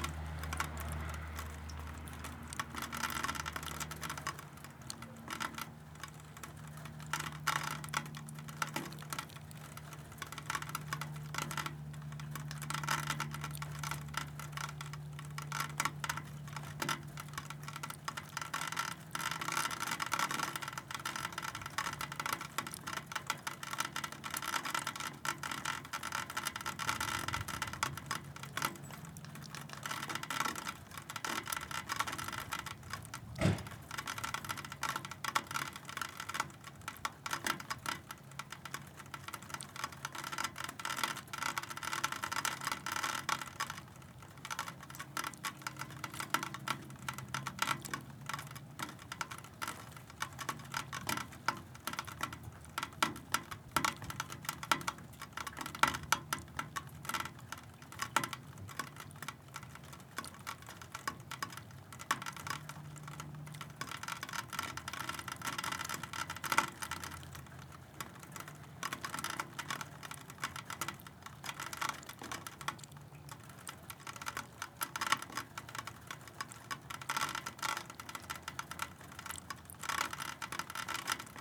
Waters Edge - Melting Snow in Downspout
This is the sound of the snow melting from the roof and coming down the the downspout on a warm March day.
Washington County, Minnesota, United States